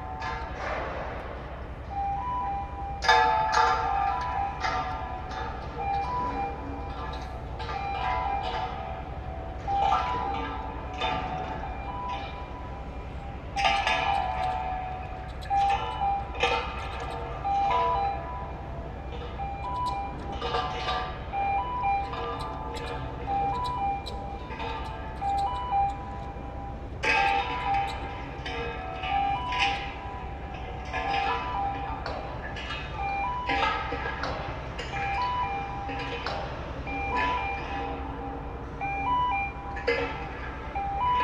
Crane and work noises at floating dock, Dunkerque, France - MOTU traveller Mk3, Rode NT-2A